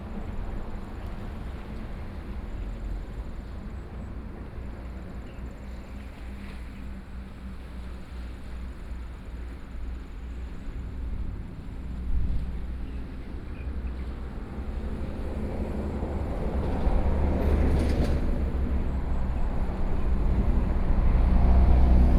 金斗宮, 頭城鎮外澳里 - Coast
Sound of the waves, Traffic Sound, Birdsong, Hot weather